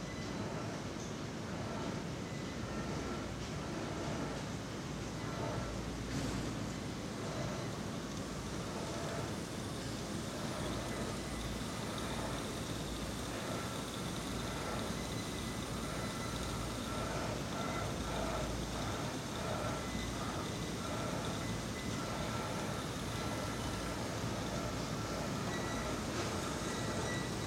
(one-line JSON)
{"title": "Cervecería Quilmes - Caminata por la Fábrica 1", "date": "2020-10-19 15:00:00", "description": "Caminando por la fábrica de cerveza Quilmes (1).", "latitude": "-34.73", "longitude": "-58.26", "altitude": "26", "timezone": "America/Argentina/Buenos_Aires"}